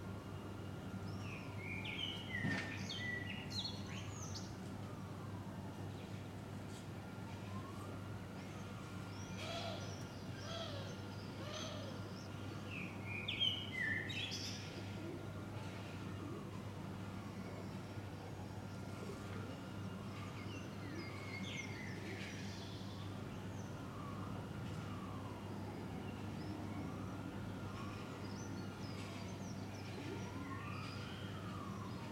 Lange Winkelhaakstraat, Antwerpen, Belgium - Morning ambience.
Calm morning in Antwerpen. Bird songs, city noises, sirens wailing in the distance, air conditioning and bell sounds.
Recorded with a Sound Devices MixPre-6 and a pair of stereo LOM Usi Pro.